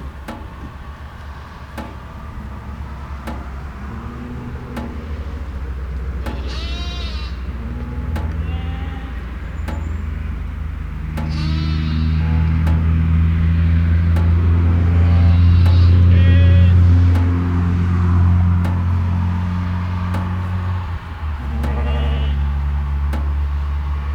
Beselich Niedertiefenbach - meadow at night, sheep, electric fence
meadow with sheep, quiet summer night, sound of a electric fence generator. huge impact of a single car driving by
Germany, 1 July 2012